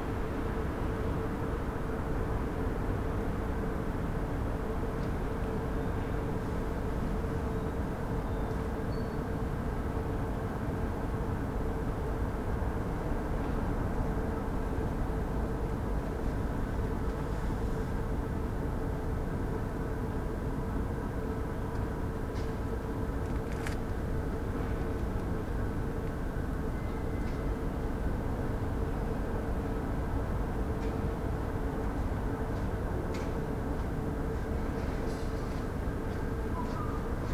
the city, the country & me: december 4, 2013